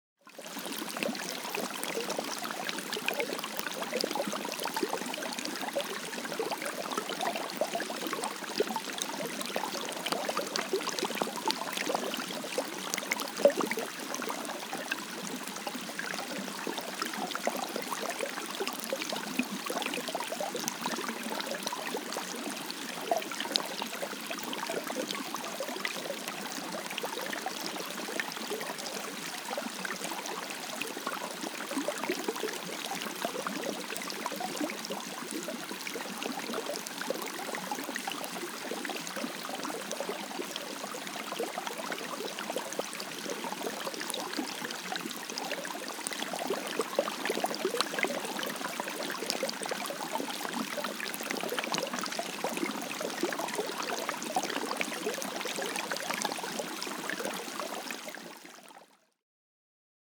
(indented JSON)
{
  "title": "Walking Holme Outflow",
  "date": "2011-04-19 02:23:00",
  "description": "Two pipes letting water out ofDigley Reservoir.",
  "latitude": "53.56",
  "longitude": "-1.83",
  "altitude": "221",
  "timezone": "Europe/London"
}